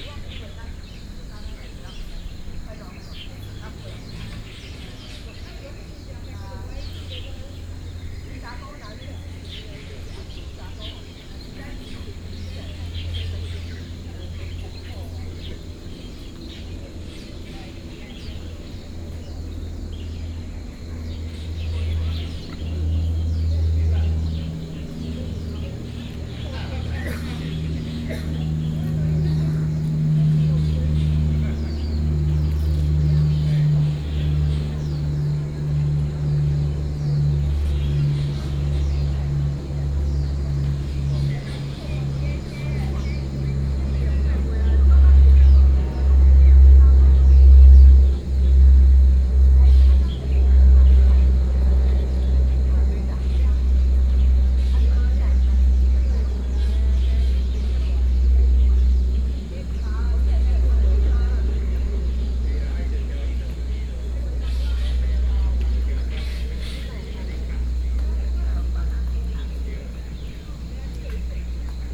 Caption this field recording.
Bird calls, Morning at the lakes, Insect sounds, Aircraft flying through, Many elderly people, Binaural recordings, Sony PCM D50